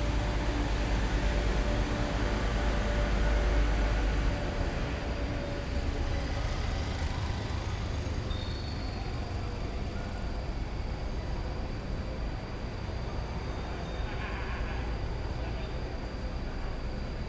Cancha de Baloncesto los Alpes, Esquina Carrera, Cl., Belén, Medellín, Antioquia, Colombia - Parque Nocturno
Se escucha los grillos, personas hablando, el sonido de bus, personas aplaudiendo. Se escucha un motor y un objeto caerse.